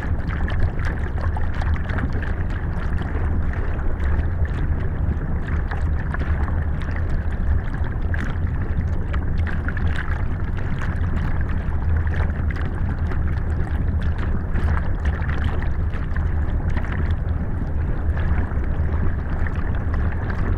Lithuania, inside small dam
Beyond white noise. Small dam recorded with two mics at once: hydrophone submerged and geophone just on earth on water line.